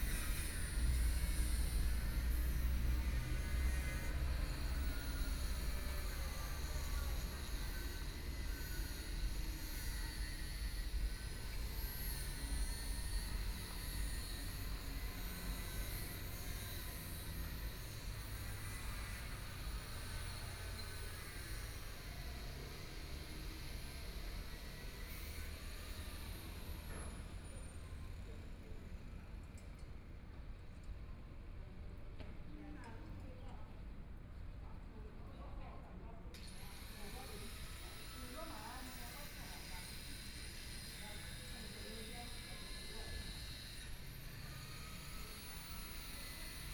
Dongshan Station, Yilan County - Morning town
Sitting in the square in front of the station, Homes under construction across the sound, Followed by a train traveling through, Binaural recordings, Zoom H4n+ Soundman OKM II